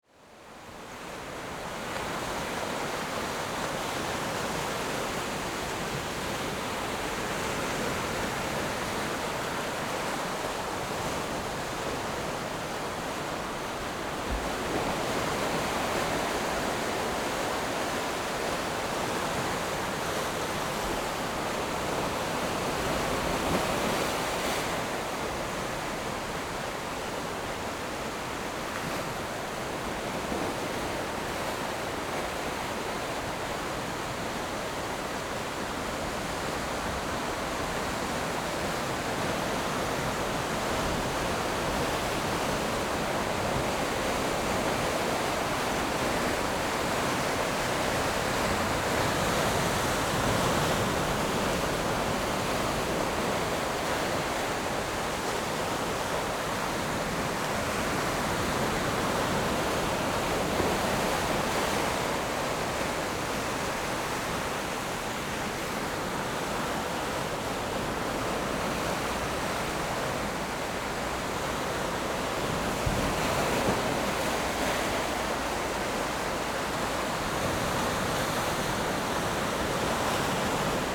頭城鎮石城里, Yilan County - Standing on the banks
Standing on the banks, Coastal, Sound of the waves
Zoom H6 MS mic+ Rode NT4